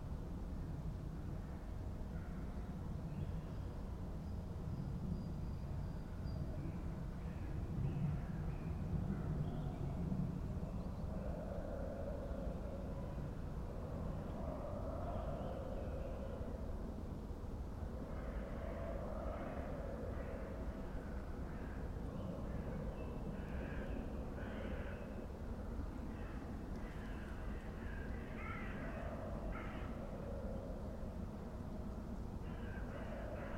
dale, Piramida, Slovenia - distant crowd of spectators
far away football match, crows and a variety of forest's small voices, young spring
6 March, 17:37